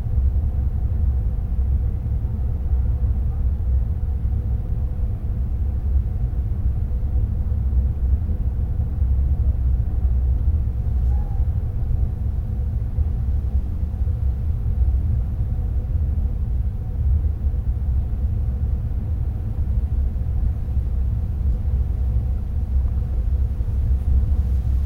Unnamed Road, Formazza VB, Italy - Hydroelectric Plant Air Conduit Drone
Drone coming from a man-made structure along the steep slope of the mountain, to help ventilation in underground conduits. Recorder sitting in thick grass, the rustling of wind in the grass can be heard, along with some voices coming from the trail below. Recorded with an Olympus LS-14